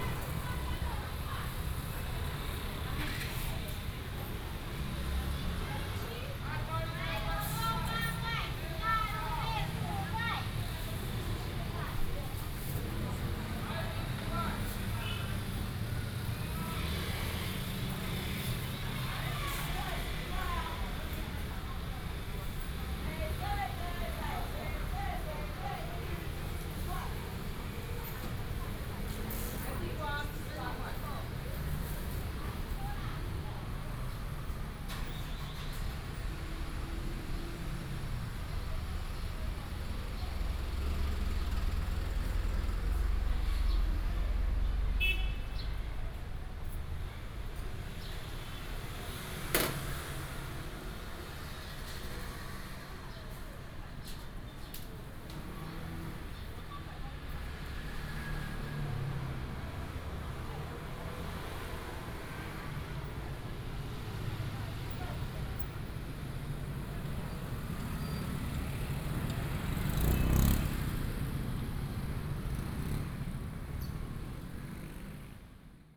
Minzhu Rd., East Dist., Hsinchu City - Walking on the road
Vegetable market, motorcycle, The sound of vendors